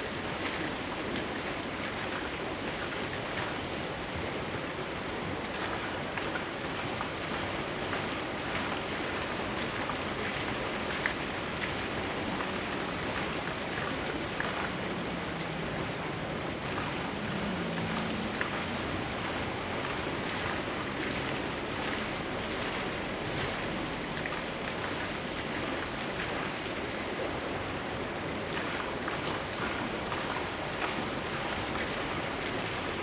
Fuxing Rd., 福興村 - Waterwheel
Waterwheel, Streams of sound, Hot weather
Zoom H2n MS+XY